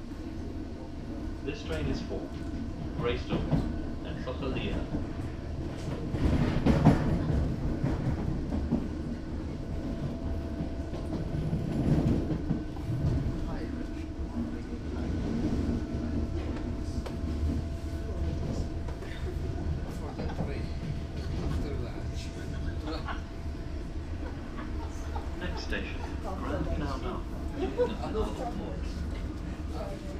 Pearse Station, Dublin, Co. Dublin, Irland - Dart
Waiting for the night's "Dart" train out to Dalkey to arrive. The sound of machines in motion is beautiful.